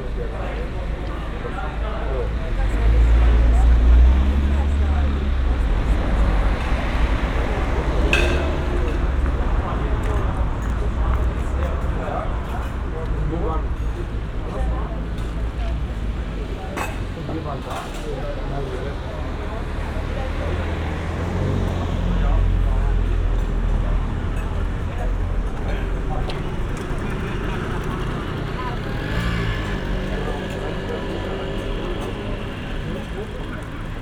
Berlin: Vermessungspunkt Friedelstraße / Maybachufer - Klangvermessung Kreuzkölln ::: 18.07.2012 ::: 23:00
Berlin, Germany, 18 July 2012, 23:00